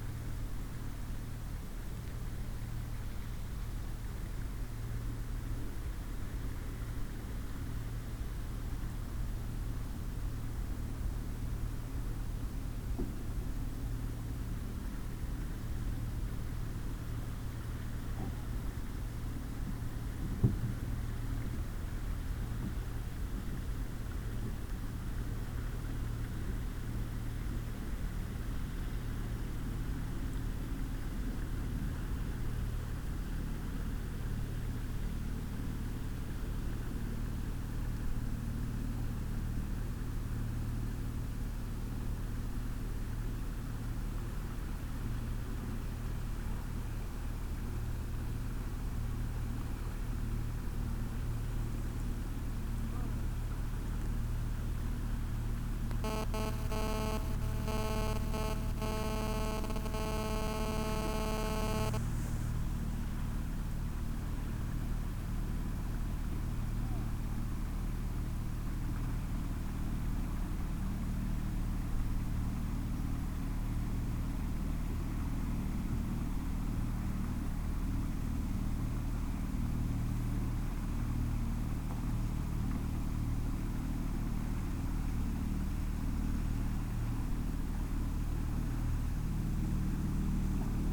On the World Listening Day of 2012 - 18th july 2012. From a soundwalk in Sollefteå, Sweden. Boats in the river Ångermanälven in Sollefteå. WLD
Sollefteå, Sweden, 18 July 2012